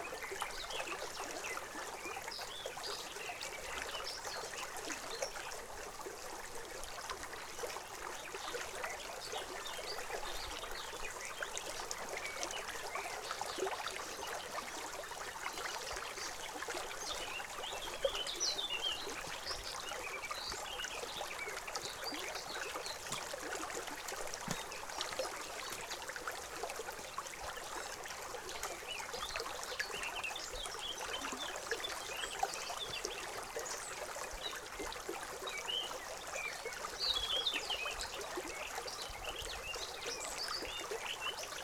Switzerland, Haute Nendaz - Bisse de Millieu - Bisse de Millieu
Near Haute Nendaz, Switzerland, there are breathtaking walks through nature.
This region is known for the bisses, small irrigation canals, running through untouched forests and alongside medieval paths.
23 May 2011, 12:46